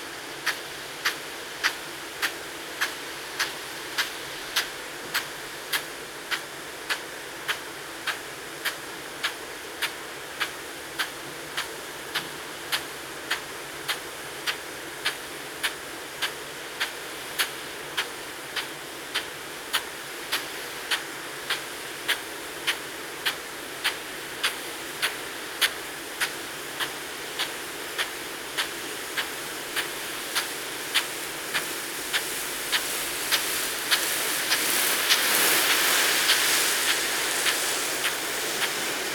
Luttons, UK - crop irrigation sprayer ...
Crop irrigation sprayer ... spraying a potato crop ... a weighted lever pushed out by the water swings back and 'kicks' the nozzle round a notch each time ... recorded using a parabolic reflector ...